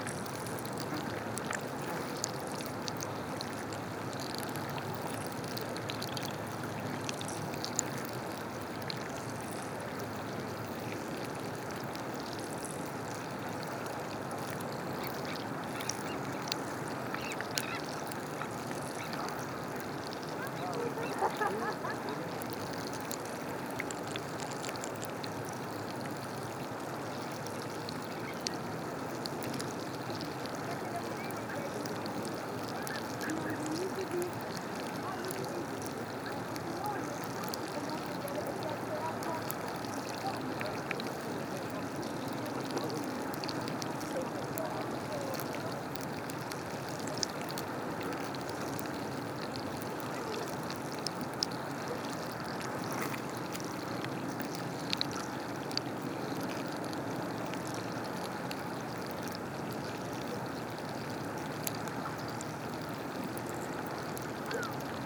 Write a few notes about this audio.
During the low tide, recording of hundred winkles eating on the rocks. The microphones were buried beneath the algae.